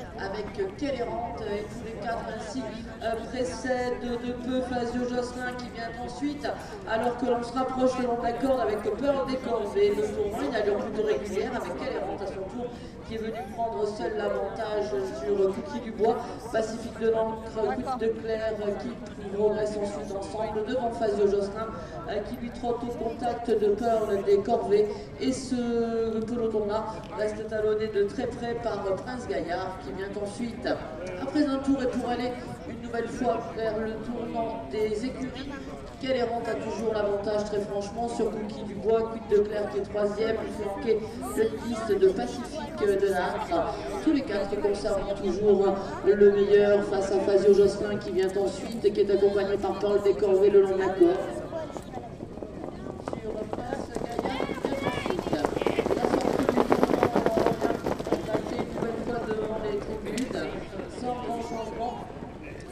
Biarritz, hippodrome des fleurs
hippodrome, course de chevaux, horse race track, horse races